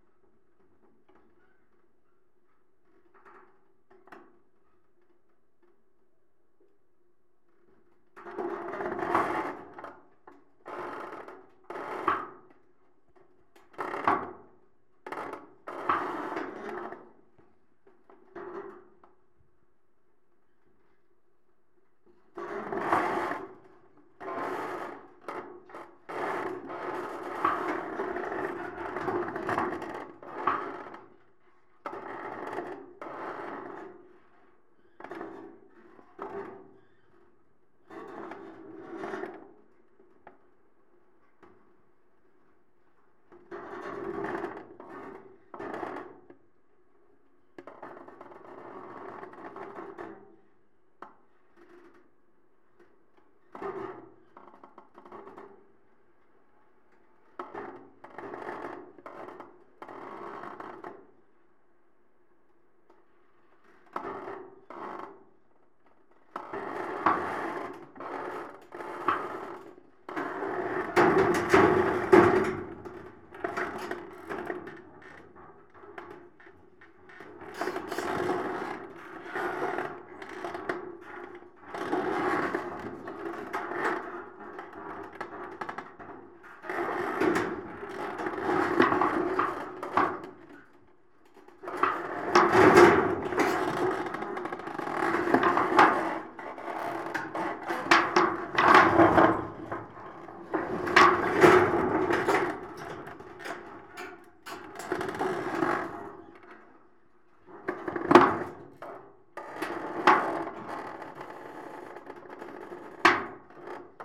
Yves Brunaud, Toulouse, France - metalic vibration 04
metal palisade moving by the action of the wind
ZOOM H4n